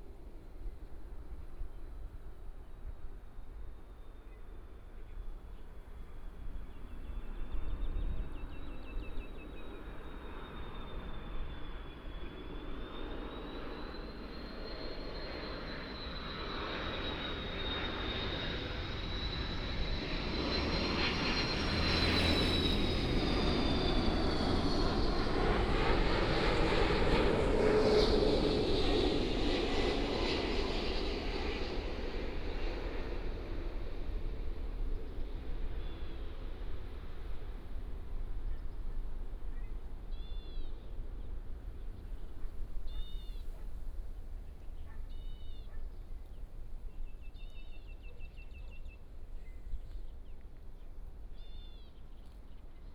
Dayuan Dist., Taoyuan City - The plane flew through
near the aircraft runway, Landing, The plane flew through
Taoyuan City, Dayuan District, 18 August 2017, 15:17